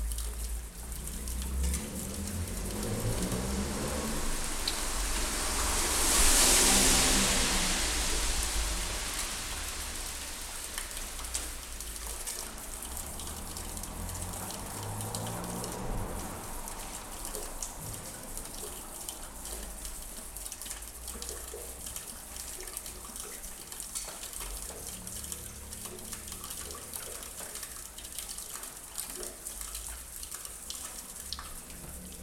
Bürgergasse, Graz, Austria - esc-rain-resonance-060819 14h20
Steady medium-frequency rain is falling onto eaves and metal gutters in the courtyard of Palais Trautmansdorff, in front of esc media art lab. Water running in the floor gutter makes bubbly sounds and produces a particular strong tubular resonance.